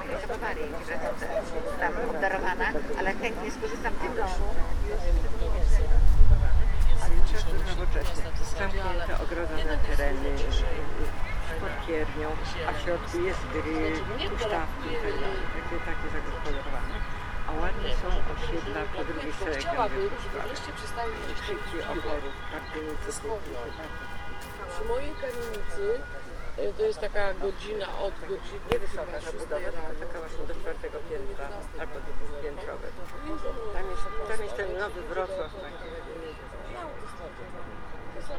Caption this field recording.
Birds Radio sound installation by Might Group during Survival 2011